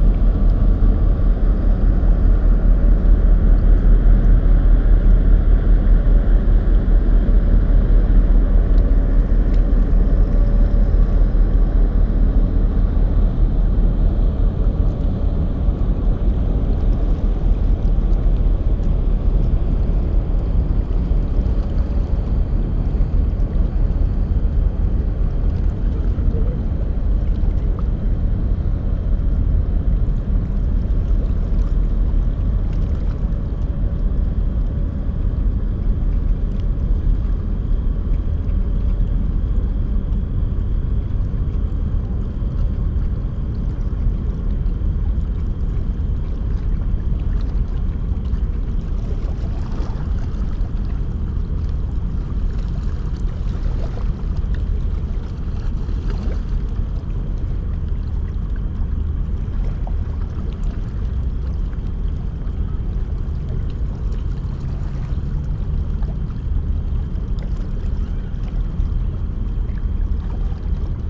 Rheinufer, Köln, Deutschland - ship traffic
late summer evening at the Rhein river bank, cargo ships passing, deep drones of the engines.
(LS5, Primo EM172)